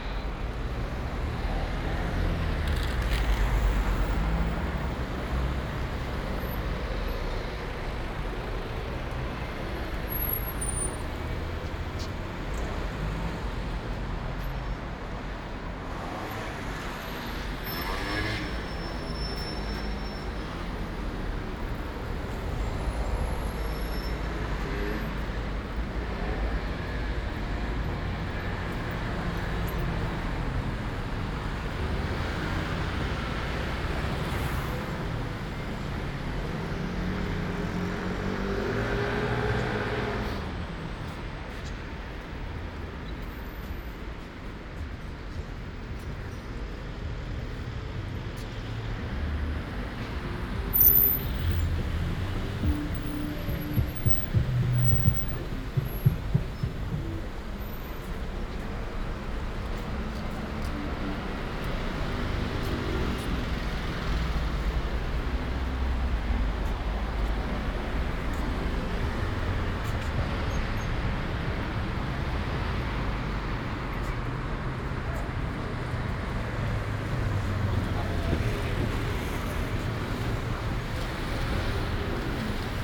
Paris soundwalks in the time of COVID-19 - Saturday night walk in Paris, before curfew, in the time of COVID19: Soundwalk
"Saturday night walk in Paris, before curfew, in the time of COVID19": Soundwalk
Saturday, October 17th 2020: Paris is scarlett zone for COVID-19 pandemic.
One way trip walking from from Boulevard Poissonnière to airbnb flat. This evening will start COVID-19 curfew from 9 p.m.
Start at 8:43 p.m. end at 9:16 p.m. duration 33’05”
As binaural recording is suggested headphones listening.
Path is associated with synchronized GPS track recorded in the (kmz, kml, gpx) files downloadable here:
For same set of recordings go to: